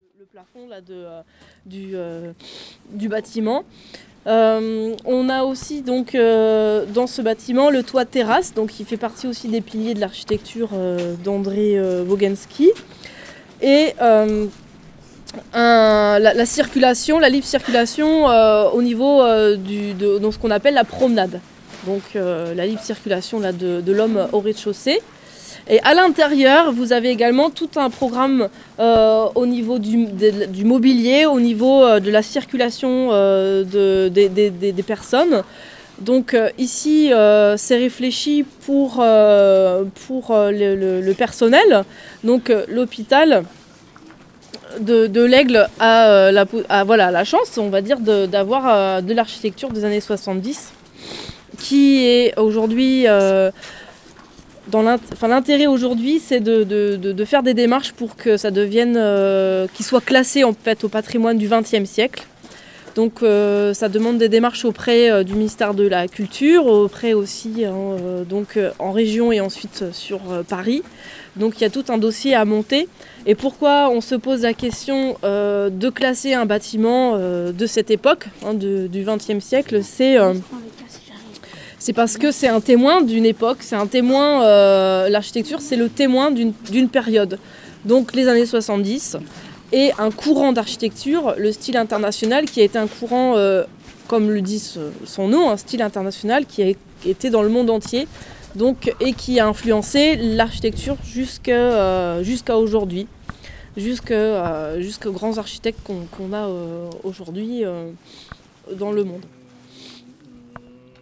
Centre Hospitalier de l'Aigle, Rue du Docteur Frinault, L'Aigle, France - Bâtiments André Wogenscky
Visite des bâtiments de l'architecte André Wogenscky au centre hospitalier de l'Aigle, Zoom H6 et micros Neumann